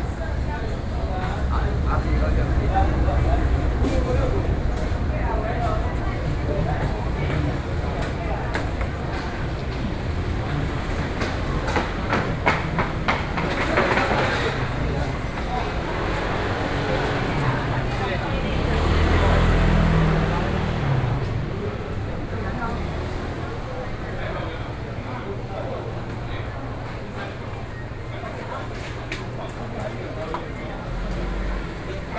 Guiyang St., Wanhua Dist., Taipei City - Traditional markets
Taipei City, Taiwan, 3 November 2012